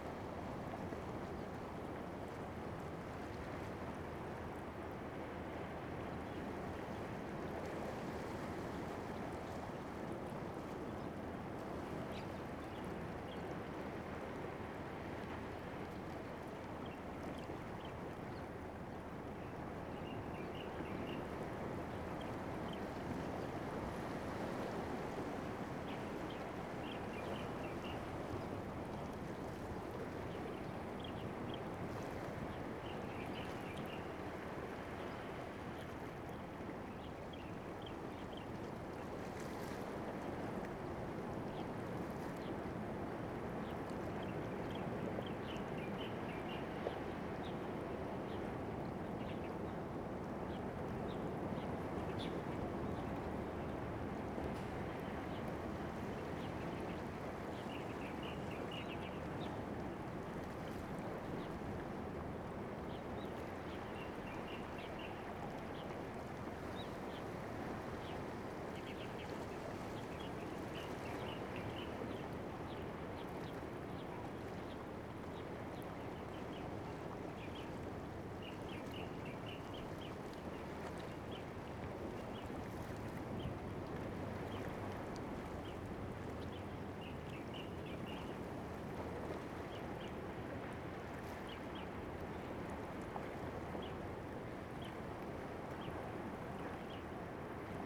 富山護漁區, Beinan Township - Tide
Tide, Birds singing, Sound of the waves, Traffic Sound
Zoom H2n MS +XY
Beinan Township, Taitung County, Taiwan